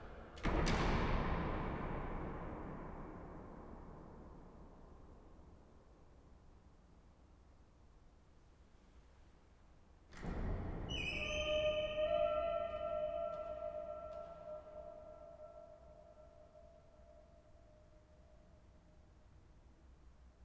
Pivovarská, Plasy, Czechia - walking in the corridor and in the chapel
visit to Plasy Monastery